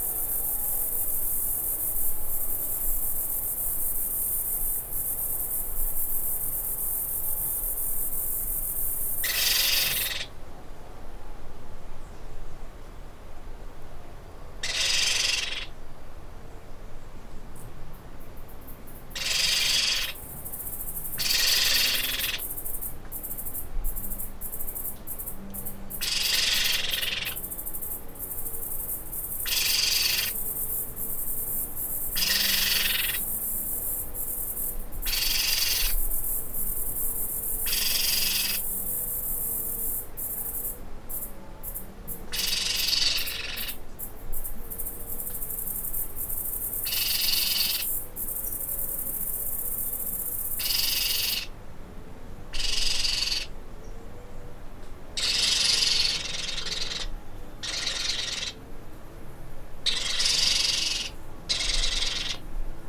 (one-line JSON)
{"title": "Tangará, Rio Acima - MG, 34300-000, Brasil - Baby pionus (parrots) screaming", "date": "2021-02-17 13:59:00", "description": "2 baby pionus (parrots) screaming on the roof in the interior of Minas Gerais, Brazil.\nTwin sound: neighing horse\nRecorded by a MS Setup Schoeps CCM41+CCM8\nin a Cinela Windscreen Pianissimo\non a MixPre-6 – Sound Devices Recorder", "latitude": "-20.11", "longitude": "-43.73", "altitude": "1086", "timezone": "America/Sao_Paulo"}